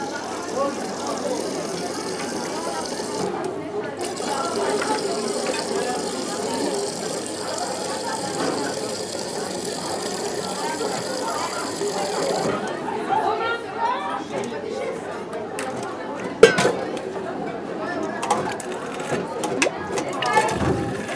Cantine, fontaine, collège de Saint-Estève, Pyrénées-Orientales, France - Cantine, remplissage de pichets à la fontaine
Preneur de son : Etienne